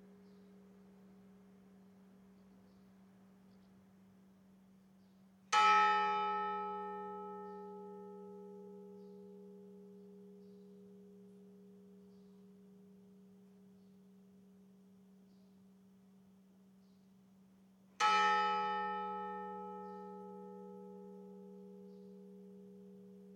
{
  "title": "Carrer de la Pl., Bolulla, Alicante, Espagne - Bolulla - Espagne 19h + Angélus.",
  "date": "2022-07-14 19:00:00",
  "description": "Bolulla - Province d'Alicante - Espagne\n4 coups cloche 1 (les heures) + 19h (7 coups - 2 fois - cloche 2) + ce qui semble être un Angélus (cloche 2).\nZOOM F3 + AKG 451B",
  "latitude": "38.68",
  "longitude": "-0.11",
  "altitude": "227",
  "timezone": "Europe/Madrid"
}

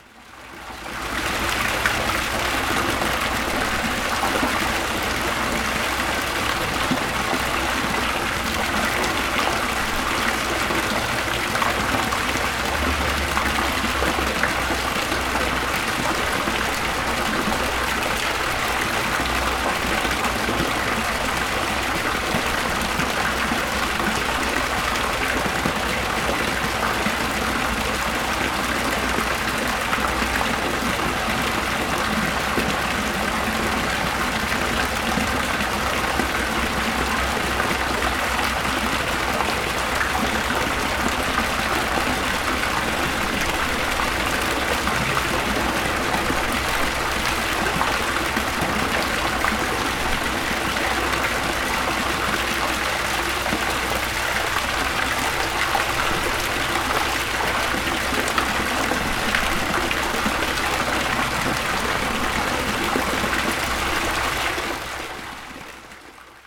{
  "title": "Pl. Carnot, Aix-les-Bains, France - Fontaine",
  "date": "2022-07-28 11:30:00",
  "description": "Près de la fontaine de la place Carnot surmontée d'une statut de flûtiste.",
  "latitude": "45.69",
  "longitude": "5.91",
  "altitude": "272",
  "timezone": "Europe/Paris"
}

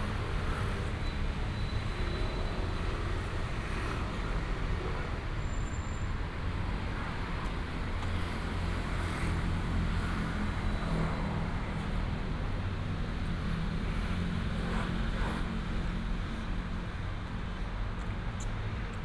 {
  "title": "東興公園, Nantun Dist., Taichung City - walking in the Park",
  "date": "2017-04-29 18:05:00",
  "description": "walking in the Park, Traffic sound",
  "latitude": "24.15",
  "longitude": "120.65",
  "altitude": "92",
  "timezone": "Asia/Taipei"
}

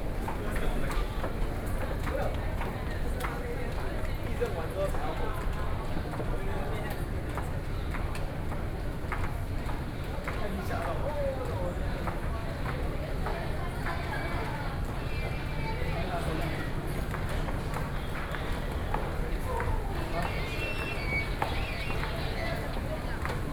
{
  "title": "Taipei Main Station, Taiwan - walk",
  "date": "2013-07-09 17:24:00",
  "description": "Footsteps, Sony PCM D50 + Soundman OKM II",
  "latitude": "25.05",
  "longitude": "121.52",
  "altitude": "12",
  "timezone": "Asia/Taipei"
}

